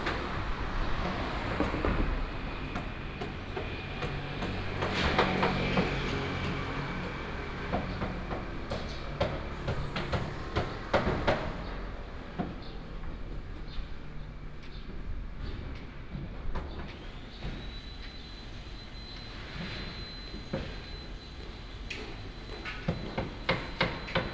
Construction site construction sound, Traffic sound, sound of the birds
Zhubei City, 嘉興路199-6號, 7 May 2017